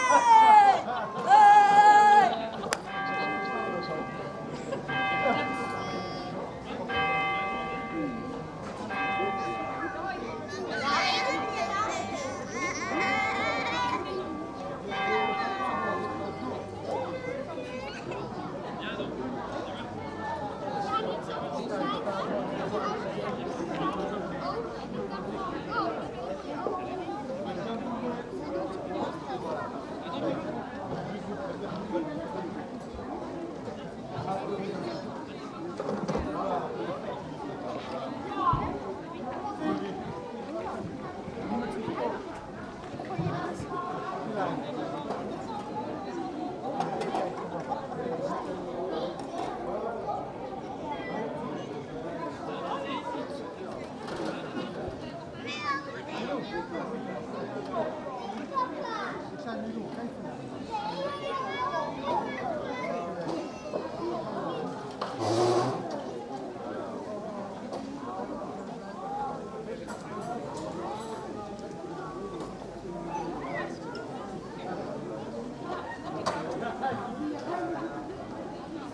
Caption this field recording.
Marché des producteurs, cloches de 19h00. Tech Note : Sony PCM-M10 internal microphones.